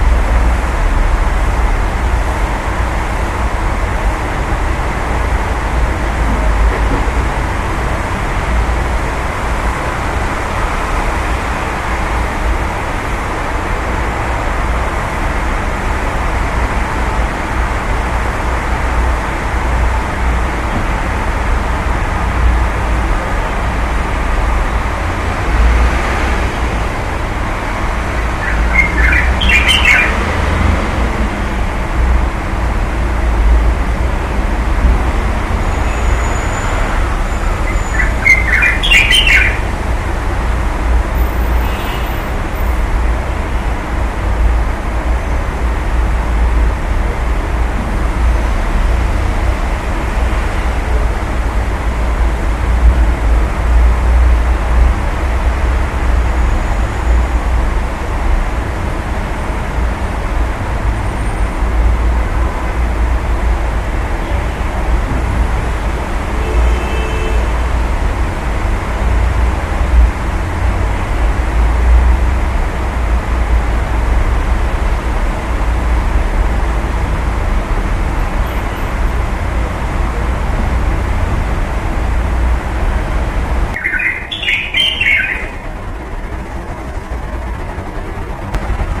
hengshan rd boredom
auditory nacolepsy noise floor